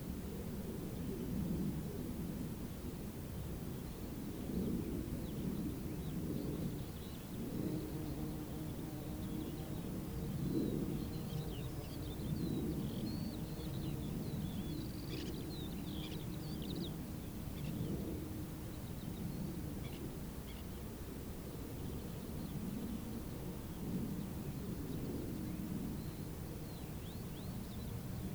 Weimar, Deutschland - SuedWestPunkt
SeaM (Studio fuer elektroakustische Musik) klangorte - suedWestPunkt
Weimar, Germany, 23 April 2012, ~14:00